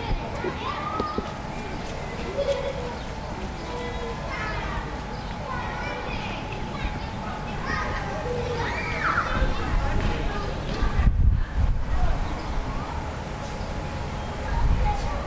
Nova Gorica, Slovenija, Ledinska Šola - Otroci Zapuščajo Igrišče
Nova Gorica, Slovenia, 9 June